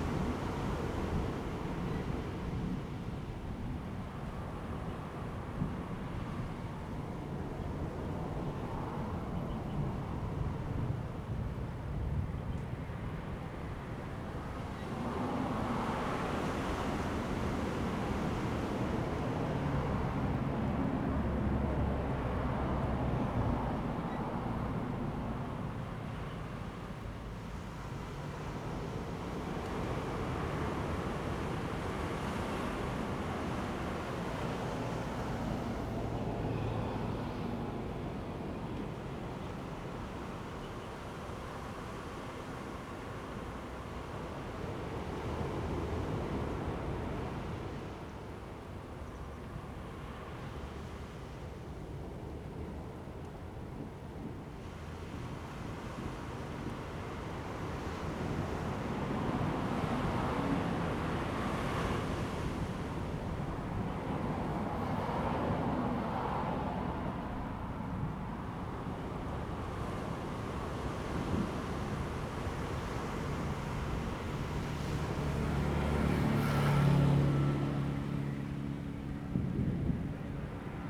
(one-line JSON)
{"title": "寧浦, Changbin Township - the waves and Traffic Sound", "date": "2014-09-08 14:35:00", "description": "Sound of the waves, Traffic Sound, Thunder\nZoom H2n MS+XY", "latitude": "23.23", "longitude": "121.41", "altitude": "13", "timezone": "Asia/Taipei"}